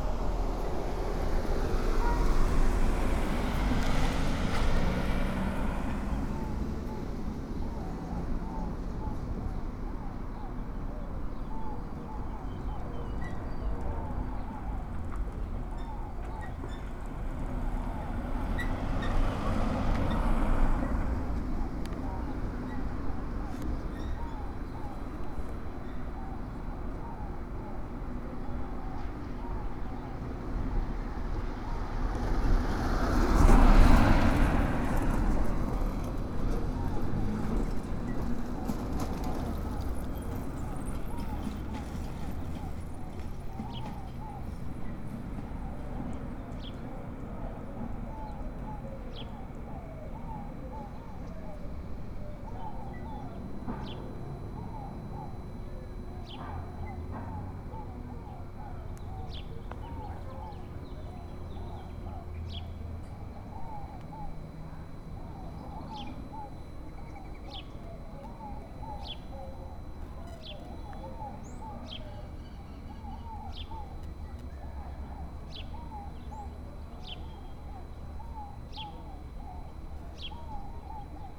Av Roma, Andrade, León, Gto., Mexico - Afuera de la escuela primaria La Salle Andrade durante la cuarentena por COVID-19 en el primer día de la fase 3.
Outside La Salle Andrade Elementary School during COVID-19 quarantine on the first day of Phase 3.
Normally at this time, it is full of people and cars that come to pick up the children after leaving their classes. Now it is almost alone.
(I stopped to record while going for some medicine.)
I made this recording on April 21st, 2020, at 2:17 p.m.
I used a Tascam DR-05X with its built-in microphones and a Tascam WS-11 windshield.
Original Recording:
Type: Stereo
Normalmente a esta hora aquí está lleno de gente y coches que vienen a recoger a los niños a la salida de sus clases. Ahora está casi solo.
(Me detuve a grabar al ir por unas medicinas.)
Esta grabación la hice el 21 de abril 2020 a las 14:17 horas.